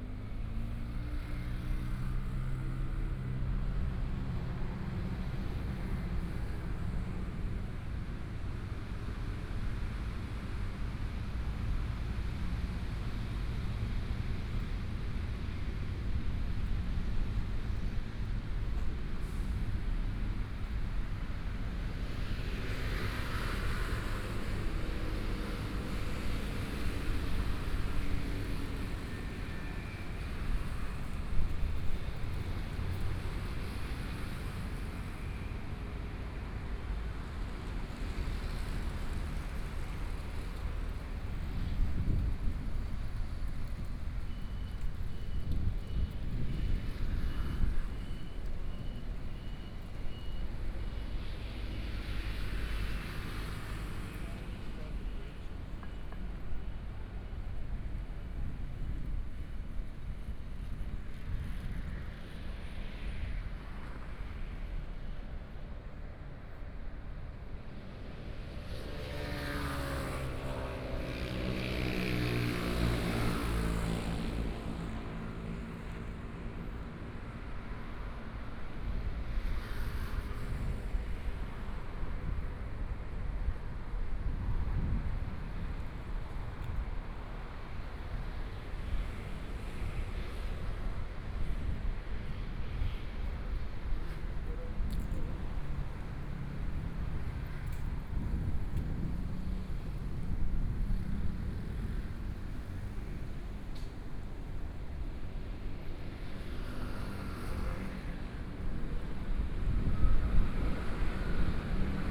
Zhongshan N. Rd., Zhongshan District - Walking on the road
Walking on the road, Traffic Sound, Aircraft traveling through, Binaural recordings, Zoom H4n + Soundman OKM II